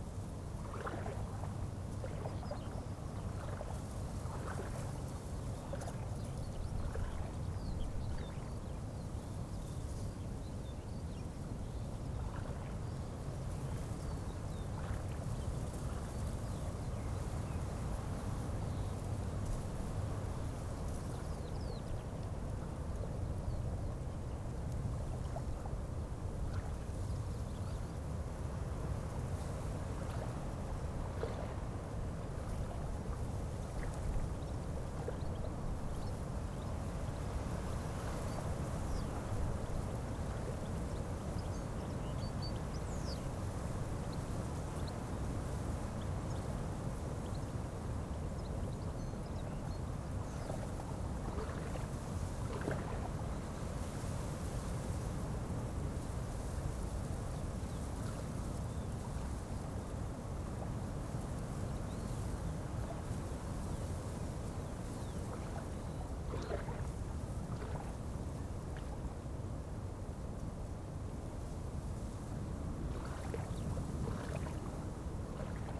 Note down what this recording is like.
river Oder, near Czelin (Poland), light waves and wind in trees, (Sony PCM D50, DPA4060)